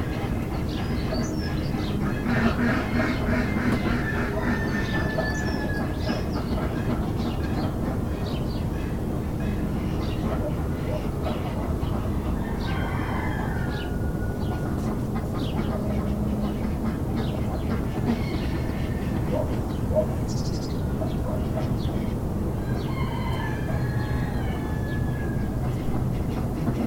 Plas Bodfa, Ynys Mon, Cymru - Sounds from Plas Bodfa garden.
Three recordings taken at Plas Bodfa. The first two are recorded in the front garden and are of birds and the wind in the bushes, the sea ( and possibly traffic ) in the backround; the third is recorded in the kitchen garden.
Tascam DR 05X, edited in Audacity.